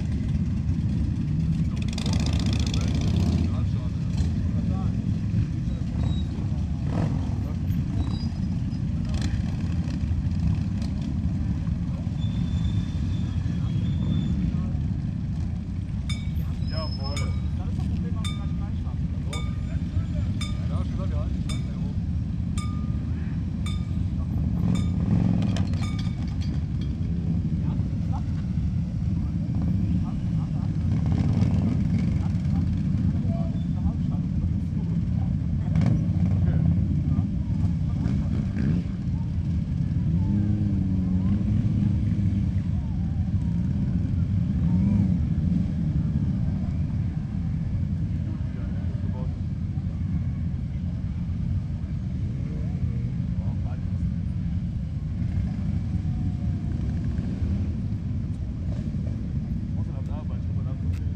Satzvey, Bahnübergang, Rockertreffen
samstag, 5.7.2008, 14:20
treffen des rockerclubs Gremium MC in satzvey, strasse gesperrt, ca. 1500 motorräder, mitglieder des clubs unterhalten sich mit polizisten am bahnübergang, zug fährt vorbei.
Eifel, 5 July 2008, 14:20